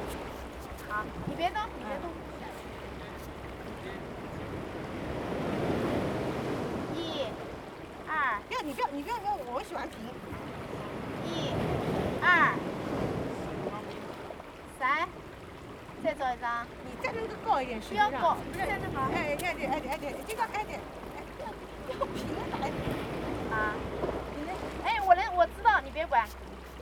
Small pier, Sound of the waves, Very Hot weather
Zoom H2n MS+XY